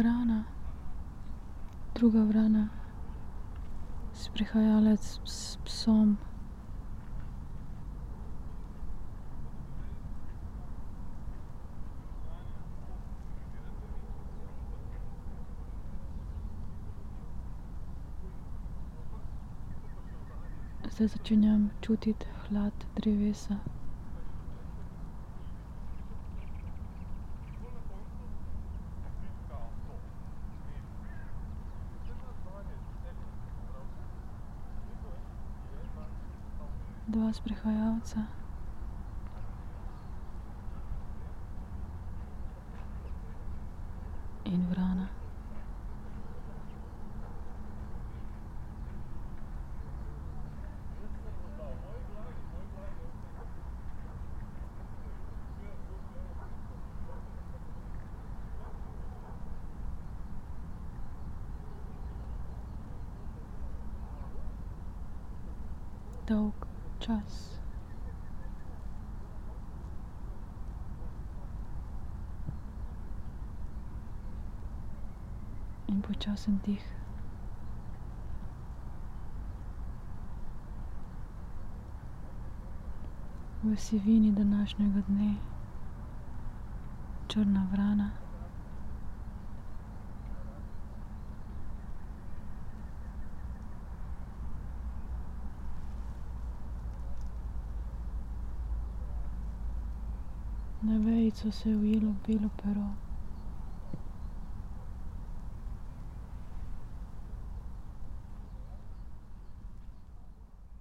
spoken words, coldness and grayness